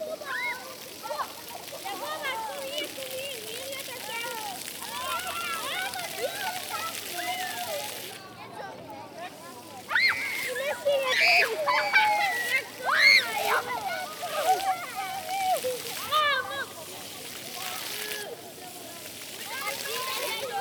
Leuven, Belgique - Aleatory fountains
A lot of children playing into aleatory fountains, they are wet and scream a lot !
Leuven, Belgium, October 2018